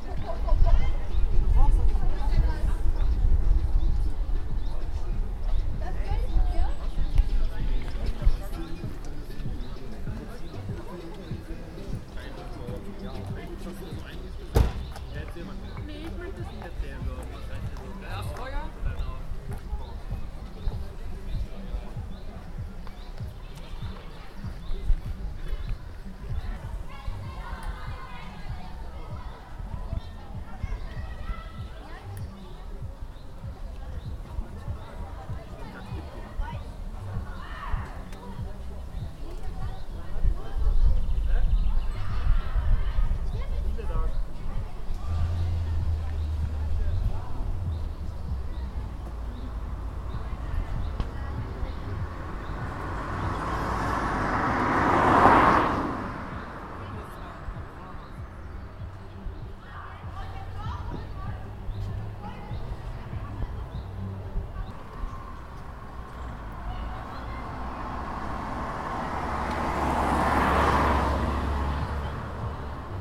We are at a parking lodge between two different schools. We use a "ZoomH1" microphone.